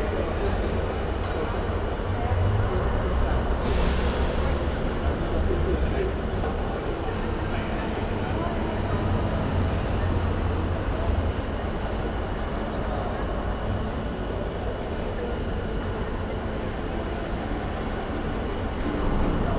Praha, hlavní nádraží, old station hall - ambience at old station hall
cafe, entrance area of historic station, ambience